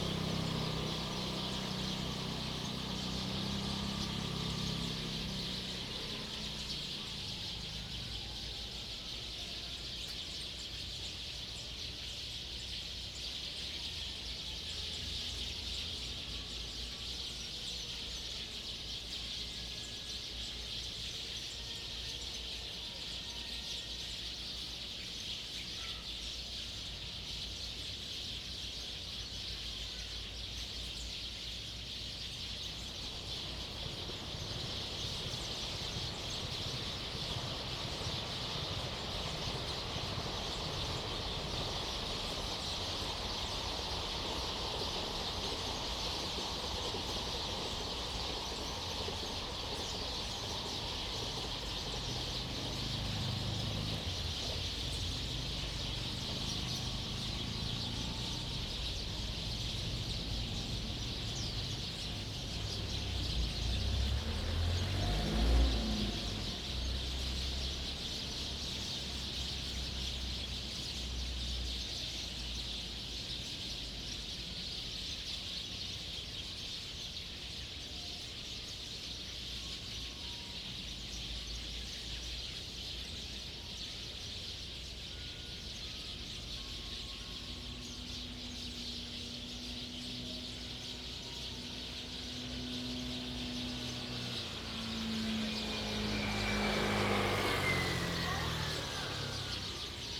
金崙村, Taimali Township - Birdsong
Birdsong, In the street, Small village, Traffic Sound
Zoom H2n MS +XY